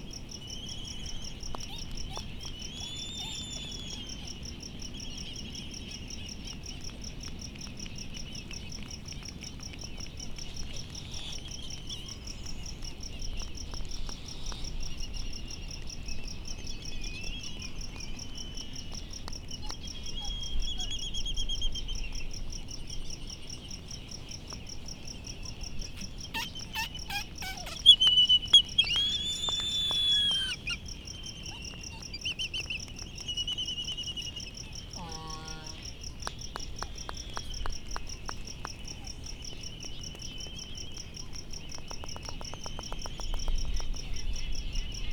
United States Minor Outlying Islands - Midway Atoll soundscape ...
Midway Atoll soundscape ... Sand Island ... bird calls ... laysan albatross ... bonin petrels ... white terns ... distant black-footed albatross ... black noddy ... and a cricket ... open lavaliers on mini tripod ... background noise and some windblast ... not quite light as petrels still leaving ...